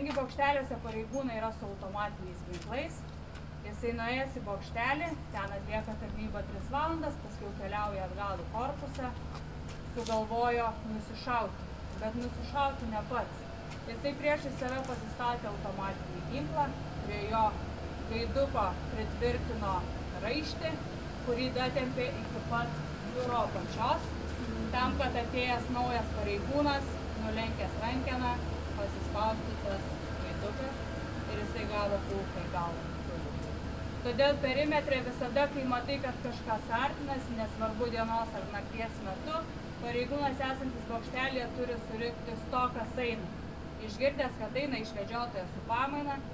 Vilnius, Lithuania, perimeter walk in Lukiskiai prison
Night excursion in recently closed Lukiskiai prison. Perimeter walk.